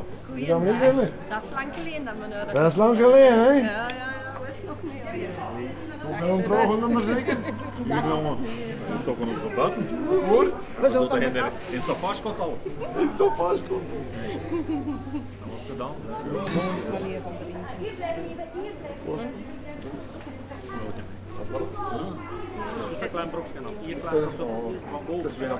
la brocante de hal / Hal flea market / World listening day
Halle, Belgium, 18 July 2010